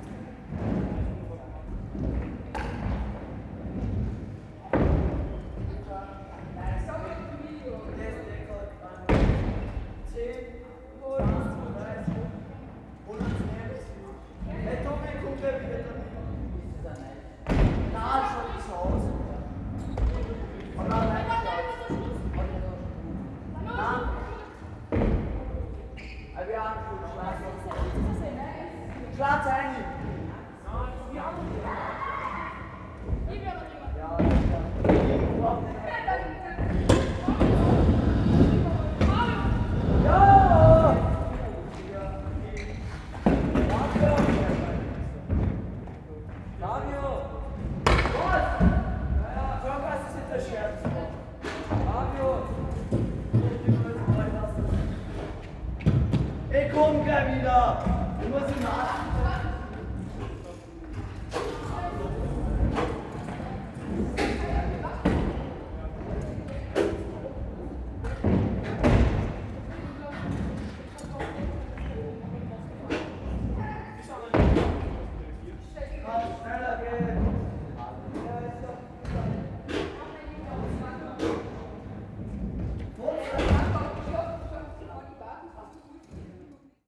The facilities for young people in this park are pretty good. The skateboard hall has a complex maze of curving, sloping surfaces to woosh down and up. It's all in wood and the spaces underneath resonate loudly. Great fun at €2,00 per session.